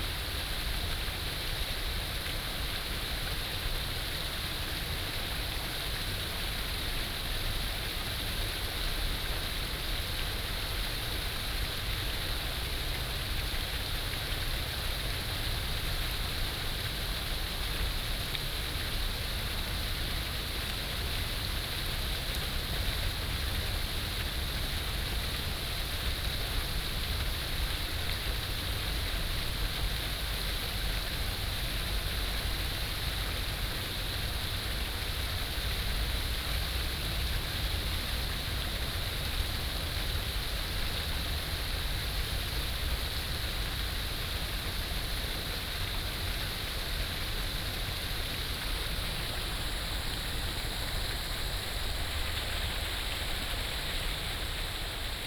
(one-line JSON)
{"title": "燕潭, 臺南公園, Tainan City - Sitting next to the pool", "date": "2017-02-18 16:32:00", "description": "Sitting next to the pool, Traffic sound", "latitude": "23.00", "longitude": "120.21", "altitude": "16", "timezone": "Asia/Taipei"}